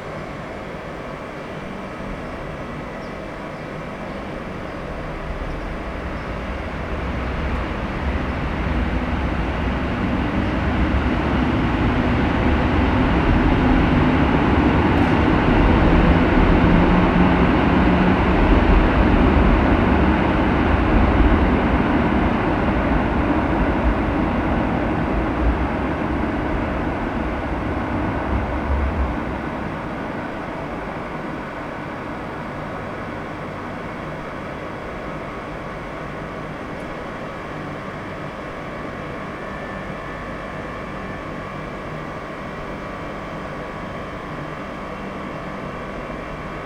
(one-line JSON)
{"title": "Wrocław, Piłsudskiego, Wrocław, Polska - Covid-19 Pandemia", "date": "2020-04-12 14:19:00", "description": "Wrocław Główny, is the largest and most important passenger train station in the city of Wrocław, in southwestern Poland. Situated at the junction of several important routes, it is the largest railway station in the Lower Silesia Voivodeship, as well as in Poland in terms of the number of passengers serviced.\nIn 2018, the station served over 21,200,000 passengers.\nThe station was built in 1855–1857, as the starting point of the Oberschlesische Eisenbahn (Upper Silesian Railway), as well as the line from Breslau to Glogau via Posen. It replaced the earlier complex of the Oberschlesischer Bahnhof (Upper Silesian Railway Station, built 1841–1842). Its designer was the royal Prussian architect Wilhelm Grapow, and in the mid-19th century, it was located near the southern outskirts of the city, as the areas to the south had not yet been urbanized.", "latitude": "51.10", "longitude": "17.04", "altitude": "122", "timezone": "Europe/Warsaw"}